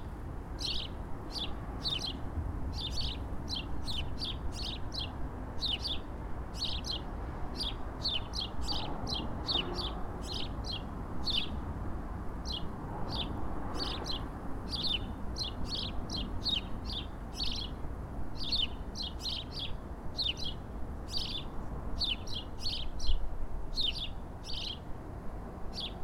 Spichrzowa, Gorzów Wielkopolski, Polska - Birds on the east boulevard
Birds around the east boulevard by the river.